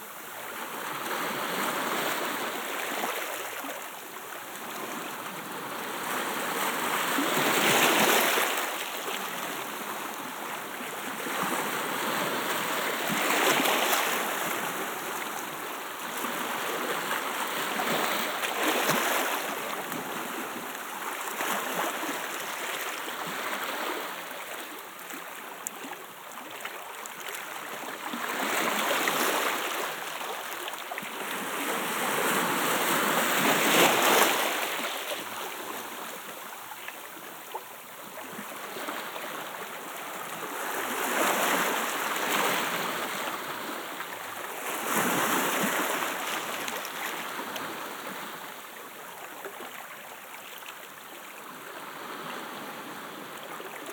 Waves at black beach Kambia, Santorini, Grecja - (53) BI Waves at Black beach
Binaural recording of waves at black beach of Kambia.
ZoomH2n, Roland CS-10EM